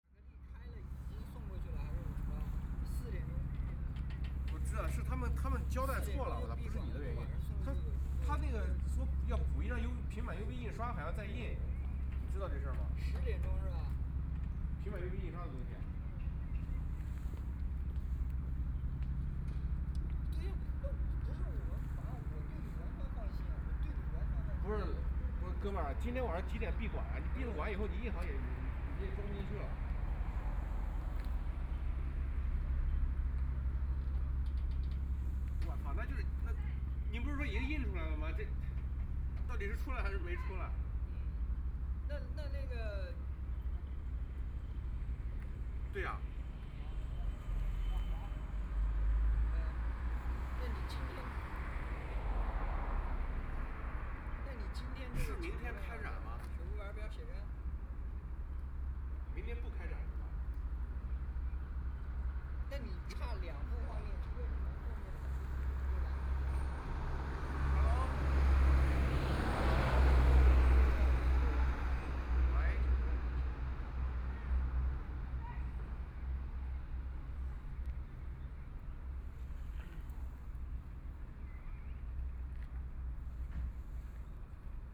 power station of art, Shanghai - Outside the museum
Outside the museum, Exhibition of the work of people talking on the phone sounds, Nearby boat traveling through the sound, Binaural recording, Zoom H6+ Soundman OKM II
Shanghai, China